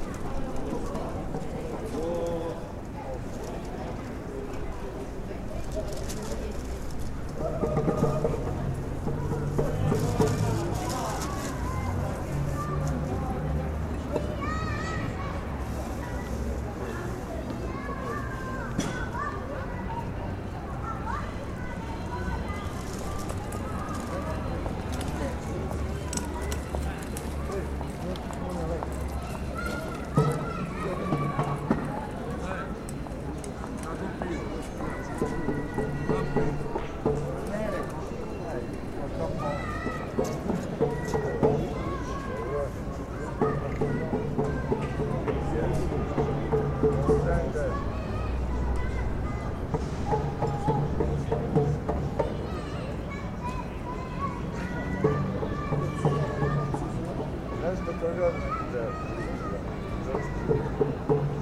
Busker Boy, Pozorišni trg, Novi Sad, Serbia - Busker Boy
A little boy is busking his very own version of the folk song Ederlezi, having become an anthem of sorts for Balkan romantics. The crowds passing by seem to enjoy one of the first spring evenings strolling on Novi Sad's Korzo. Next to me there's a bunch of vagrants gathering, sipping their Jelen Pivo and getting ready for some adventure.
For the following day, the ruling nationalist Serbian Progressive Party called for a protest against the social democratic leadership of the autonomous Vojvodina province. The city was covered with posters featuring slogans like "We won't give away our Vojvodina" or "Novi Sad - Capital City of Serbia", many of which having been pulled down, though. On the very day of the protest, a friend counted around 200 buses, mainly from places in the rest of Serbia, parked along Novi Sad's Danube bank.